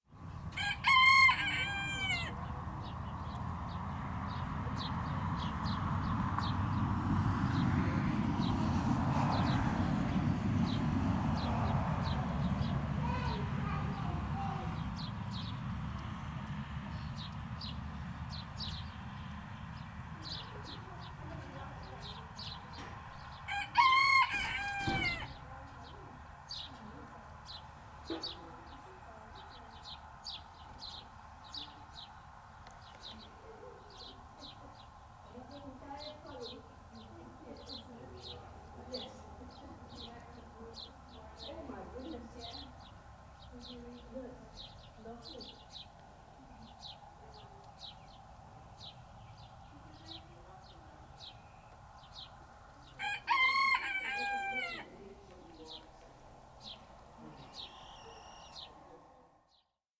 Burland Croft Trail, Trondra, Shetland, UK - Rooster on the Burland Croft Trail
This is a recording of the rooster that lives on the Burland Croft Trail; an amazing place run by Tommy and Mary Isbister. Tommy and Mary have been in Trondra since 1976, working and developing their crofts in a traditional way. Their main aim is to maintain native Shetland breeds of animals, poultry and crops, and to work with these animals and the environment in the tried-and-tested way that kept countless generations of Shetlanders alive in the past. The Burland Croft Trail is open all summer, and Mary and Tommy were incredibly helpful when I visited them, showing me around and introducing me to all their animals and also showing me some of the amazing knitwear produced by both Mary, Tommy and Mary's mothers, and their daughter, showing three generations of knitting and textile skill.
Shetland Islands, UK, 5 August 2013, 16:16